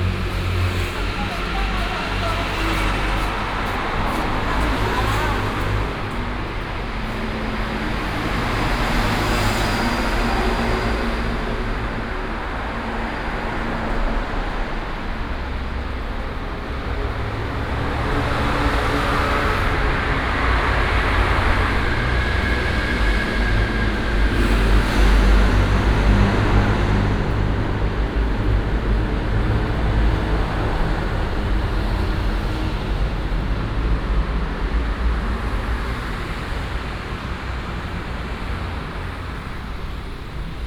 Xida Rd., East Dist., Hsinchu City - the underground passage
Walk through the underground passage, Traffic sound
Hsinchu City, East District, 新竹市西大路人行地下道, January 2017